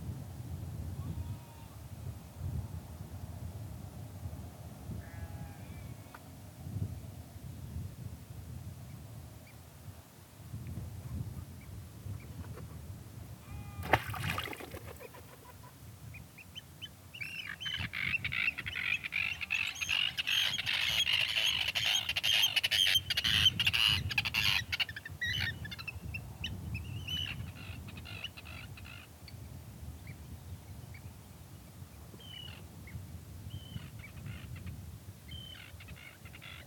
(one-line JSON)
{"title": "Sat on the rocks outside Nesbister Böd, Whiteness, Shetland Islands, UK - Listening to terns, wind, sheep and otters outside the camping böd", "date": "2013-08-03 21:35:00", "description": "The böd at Nesbister is in a truly beautiful situation, a fifteen minute walk from where you can dump a car, perched at the edge of the water, at the end of a small, rocky peninsula. There is a chemical toilet and a cold tap there, and it's an old fishing hut. People who have stayed there in the past have adorned the ledge of the small window with great beach finds; bones, shells, pretty stones, pieces of glass worn smooth by the sea, and driftwood. There is a small stove which you can burn peat in, and I set the fire up, ate a simple dinner of cheese and rice cakes, then ventured out onto the rocks to listen to everything around me. Terns are the loudest thing in the evening by Nesbister, but the sheep who graze all around the peninsula were doing their evening time greetings, and the otters who live on a tiny island quite near to the böd were shyly going for their swims.", "latitude": "60.19", "longitude": "-1.29", "altitude": "76", "timezone": "Europe/London"}